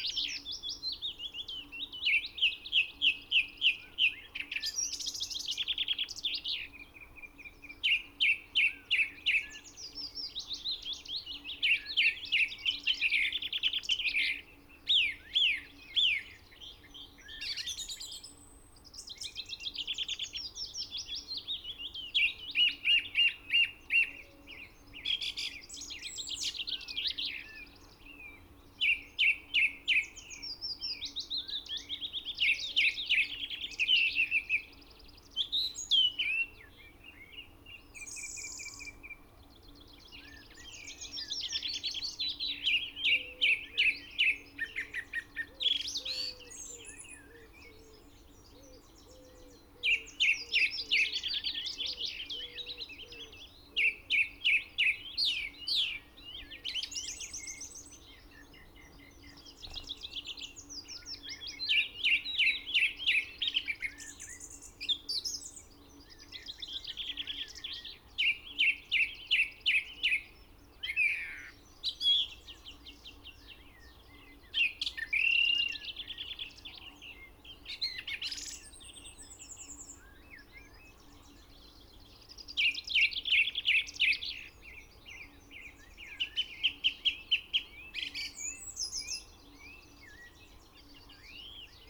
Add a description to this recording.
song thrush song ... Olympus LS 14 integral mics ... bird call ... song ... willow warbler ... chaffinch ... crow ... goldfinch ... blackbird ... pheasant ... recorder clipped to branch ...